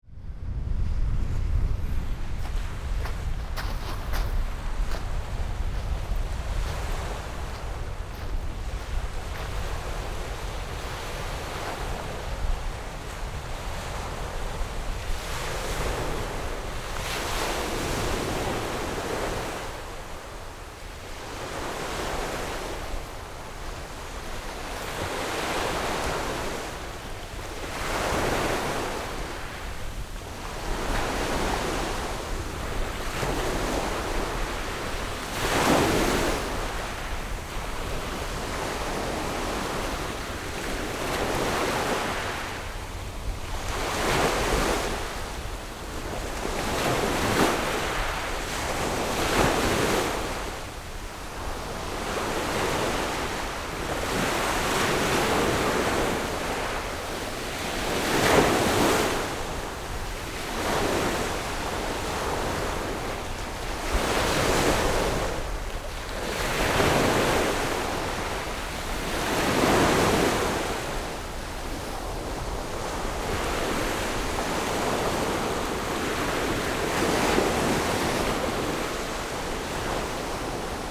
赤崁村, Baisha Township - Sound of the waves
Sound of the waves, at the beach
Zoom H6 Rode NT4
22 October 2014, 11:29